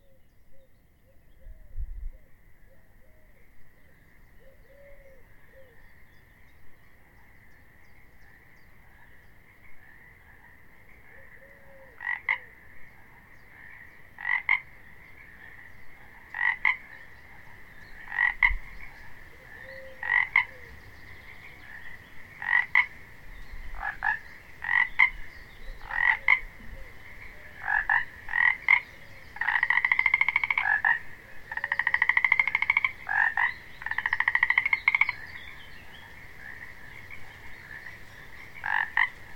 libocky rybnik
very early spring morning at the liboc pond, frogs. 2009
Prague, Czech Republic